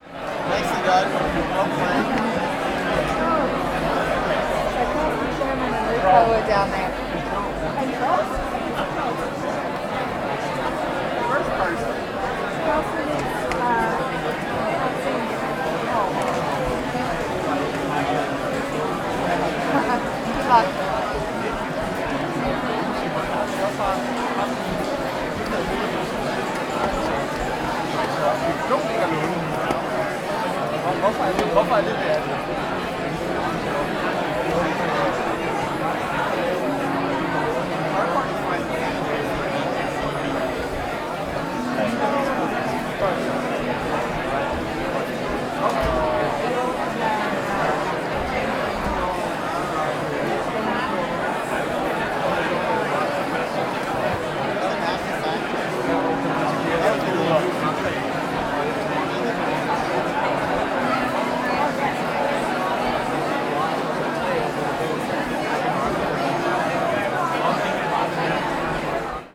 Fabriksområdet, København, Denmark - Before the event
Atmosphere before cultural event. Background music.
Ambiance avant événement culturel. Musique de fond.